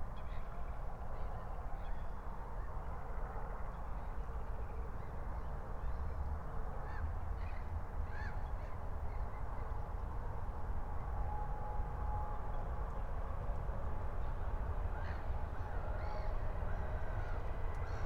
22:50 Berlin, Buch, Moorlinse - pond, wetland ambience
Deutschland, 29 May 2022